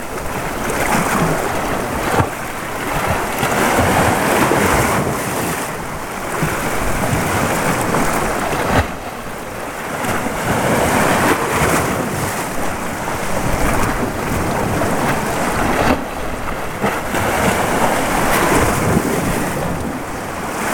tondatei.de: cala llombards
wellen, meer, brandung